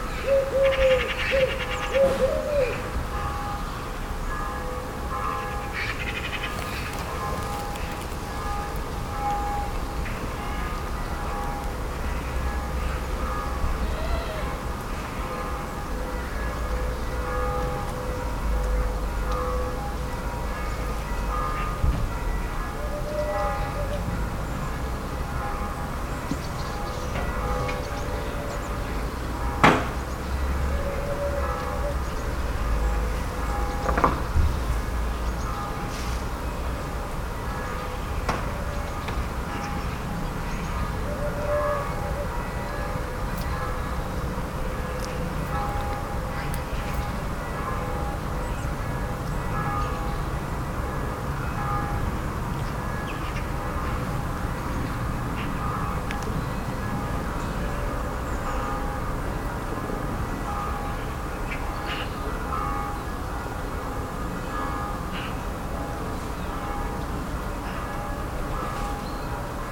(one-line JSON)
{"title": "Maintenon, France - Bells and birds", "date": "2015-12-28 11:00:00", "description": "Bells are ringing and birds are singing. General ambience of this semi-rural place.", "latitude": "48.59", "longitude": "1.59", "altitude": "132", "timezone": "Europe/Paris"}